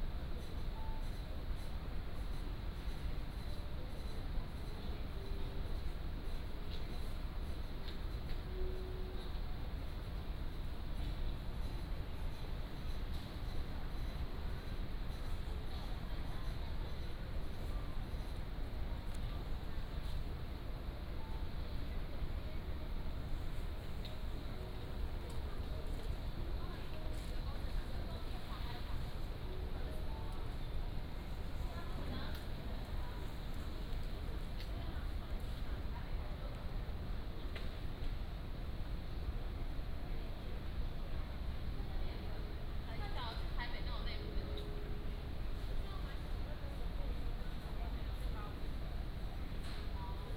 Makung Airport, Penghu County - In the airport lobby
In the airport lobby
Husi Township, Magong Airport (MZG), 23 October 2014, ~19:00